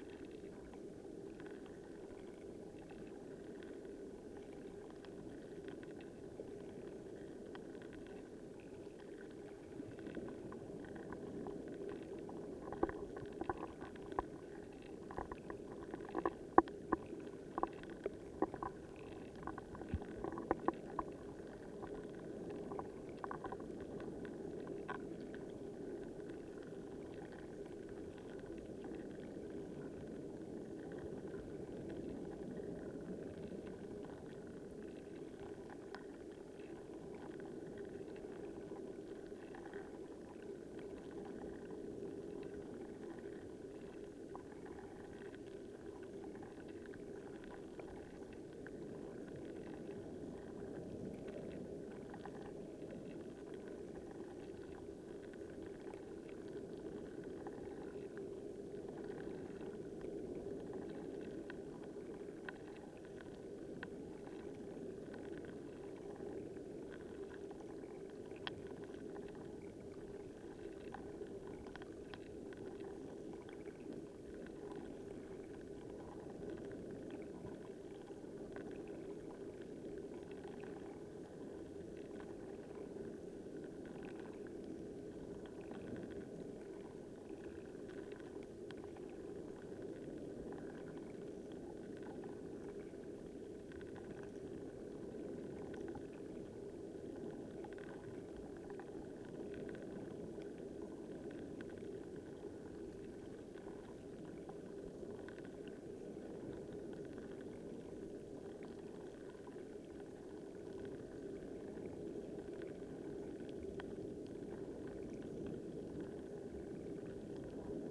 Periwinkles and other creatures making delicate, quiet sounds in this rockpool, the roar of the ocean can be heard in the background. About 90 seconds in you hear a helicopter fly over. Not even the creatures in the rock pool are immune to the dense air traffic of the Royal National Park.
Two JrF hydrophones (d-series) into a Tascam DR-680.
24 September, 17:00